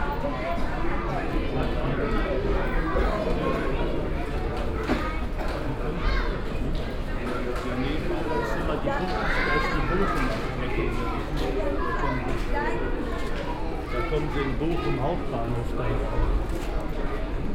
essen, main station, track 4
At track 4 -a female announcement - the sound of a school class and other travellers waiting on their train connection - a male announcement and the arrival of a train.
Projekt - Stadtklang//: Hörorte - topographic field recordings and social ambiences
Essen, Germany, 9 June